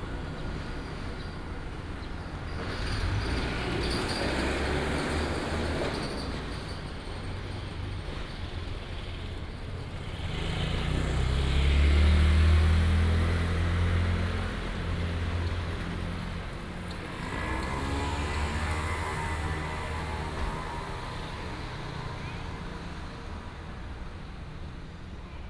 Ln., Chikan S. Rd., Ziguan Dist., Kaohsiung City - At the intersection
At the intersection, Traffic sound, Construction sound, Bird sound
Binaural recordings, Sony PCM D100+ Soundman OKM II